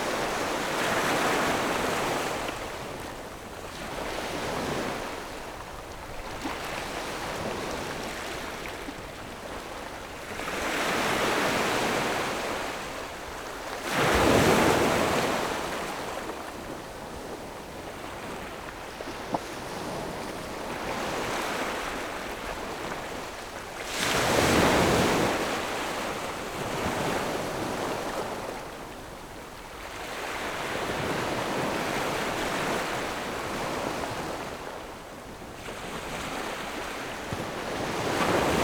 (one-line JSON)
{"title": "科蹄澳, Nangan Township - Small beach", "date": "2014-10-14 11:59:00", "description": "Small beach, Sound of the waves\nZoom H6+ Rode NT4", "latitude": "26.16", "longitude": "119.92", "altitude": "21", "timezone": "Asia/Taipei"}